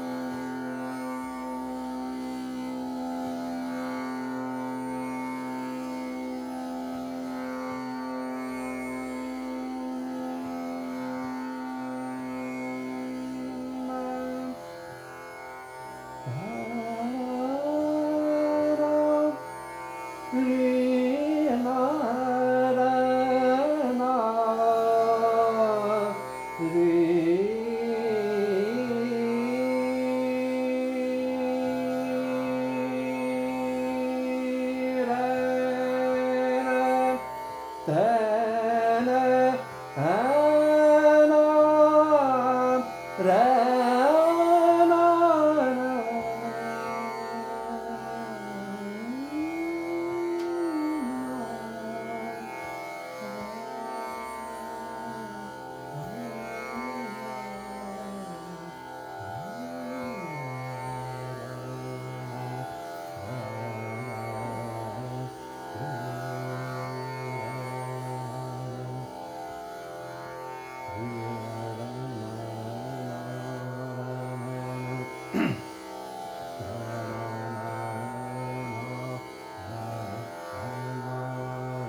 Le Village, Brénaz, France - 2019-05-25 raga de la nuit
raga de la nuit, avec mohan shyam
25 May, 22:15